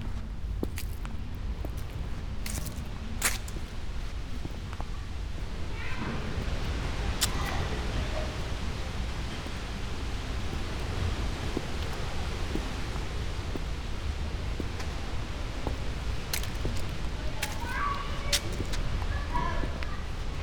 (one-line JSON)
{"title": "Bürknerstr., Neukölln, Berlin - walking, wind high above in tree crowns", "date": "2015-09-05 16:03:00", "description": "Sonopoetic paths Berlin", "latitude": "52.49", "longitude": "13.43", "altitude": "48", "timezone": "Europe/Berlin"}